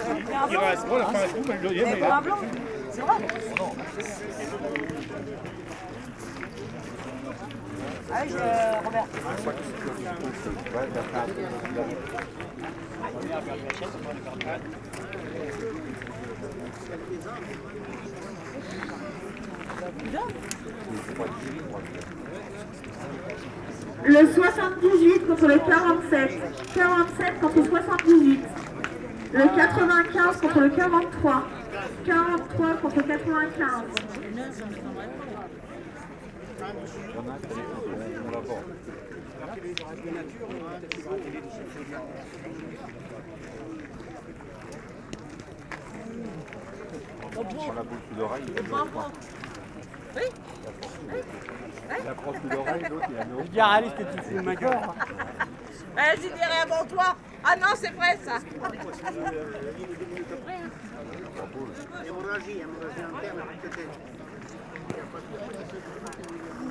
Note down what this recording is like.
A huge bowling competition, with a lot of old persons playing and kindly joking.